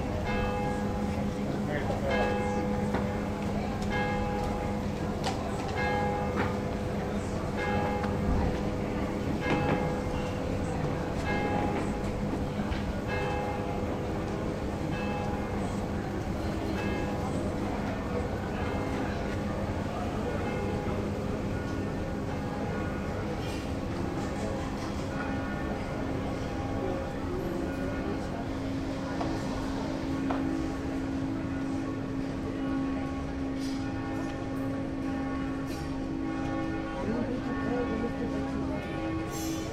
Dolac, cafe next to the market
recorded at the market with church bells, in the framework of the EBU sound workshop